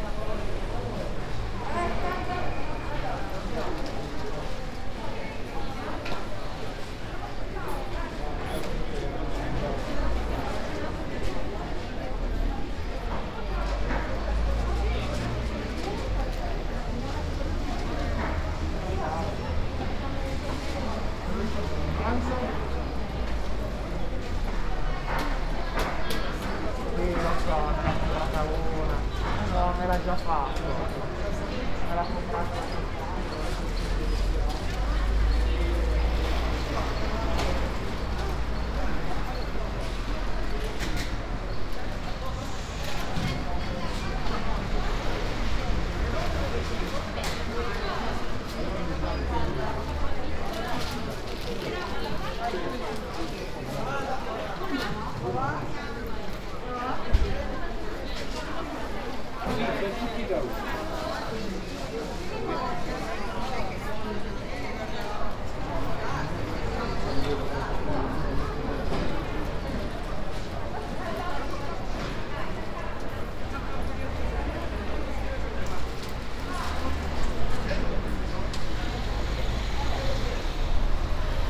“Outdoor market in the square at the time of covid19” Soundwalk
Chapter LIV of Ascolto il tuo cuore, città. I listen to your heart, city.
Thursday April 23rd 2020. Shopping in the open air square market at Piazza Madama Cristina, district of San Salvario, Turin, fifty four days after emergency disposition due to the epidemic of COVID19.
Start at 11:27 a.m., end at h. 11:59 a.m. duration of recording 22’10”
The entire path is associated with a synchronized GPS track recorded in the (kml, gpx, kmz) files downloadable here:
Piemonte, Italia, 23 April 2020, ~11:00